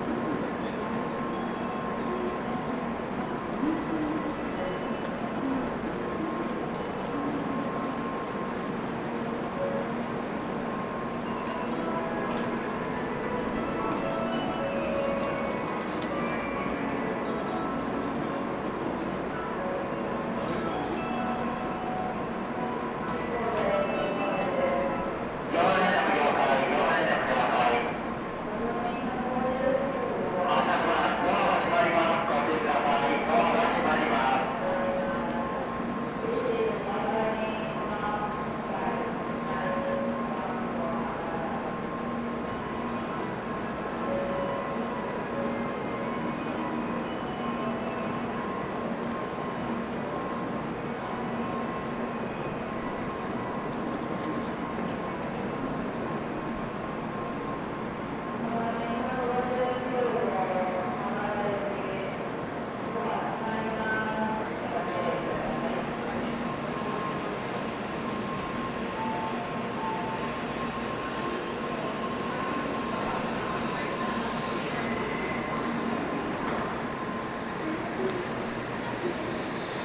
Shin-Kobe Station - Shinkansen platform
Waiting for the Shinkansen Hikari to Nagoya.
Recorded with Olympus DM-550.